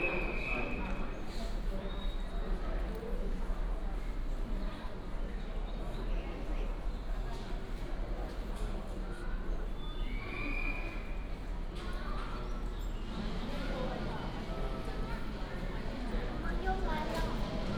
新烏日火車站, 台中市烏日區 - Walk at the station
Walk at the station, From the station hall to the station platform, The train runs through